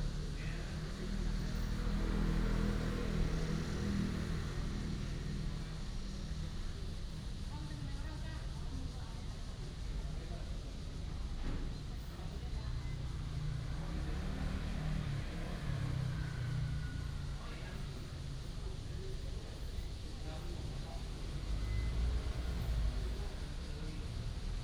福星宮, Pingzhen Dist. - In the square of the temple
In the square of the temple, Cicada cry, birds sound, traffic sound, Old man, The weather is very hot